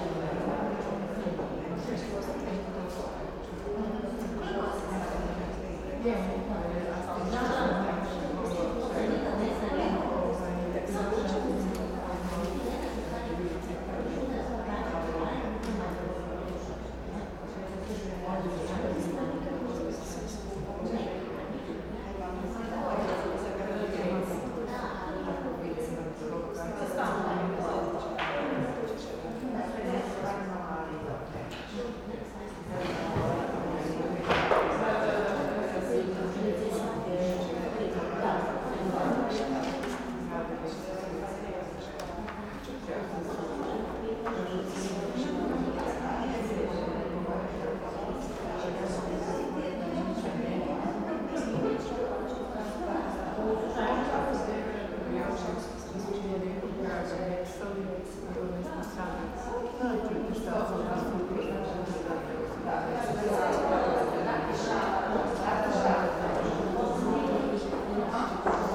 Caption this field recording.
- Interlude - Presentation of Conference Pierre Schaeffer: mediArt